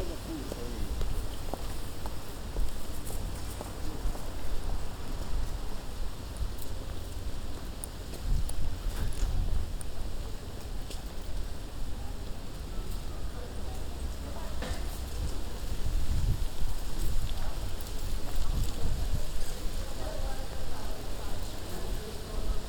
Blätterrauschen. Große Bergstraße. 31.10.2009 - Große Bergstraße/Möbelhaus Moorfleet